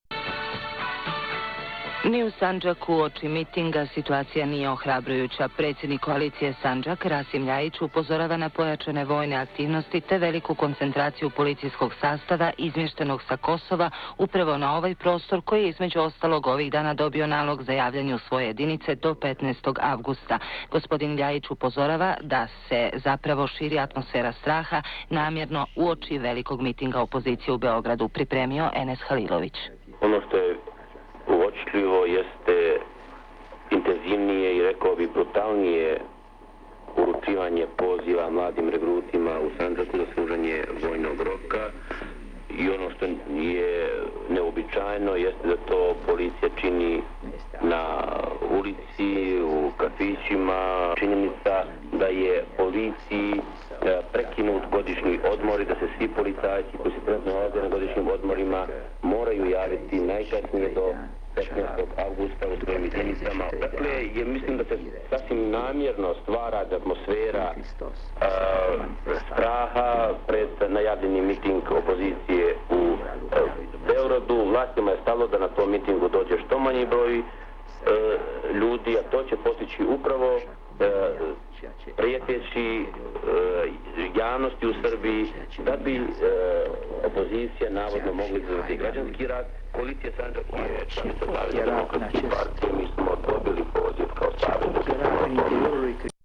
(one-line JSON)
{"title": "Radio under sanctions Belgrade, Serbia", "date": "1999-06-25 20:00:00", "description": "archive recording, from a trip to Belgrade in 1999", "latitude": "44.80", "longitude": "20.49", "altitude": "140", "timezone": "Europe/Belgrade"}